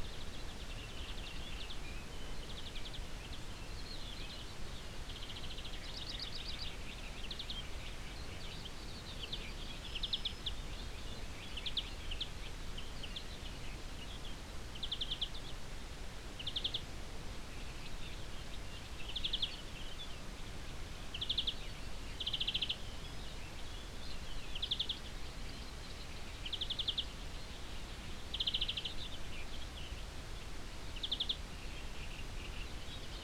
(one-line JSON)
{"title": "Aukštadvario seniūnija, Litauen - Lithuania, farm house, countryside in the mornig", "date": "2015-07-03 07:00:00", "description": "Behind the barn in the morning time on a mellow warm summer day. the sounds of morning birds, cicades and in the distance a dog from a nearby farm house.\ninternational sound ambiences - topographic field recordings and social ambiences", "latitude": "54.63", "longitude": "24.65", "altitude": "167", "timezone": "Europe/Vilnius"}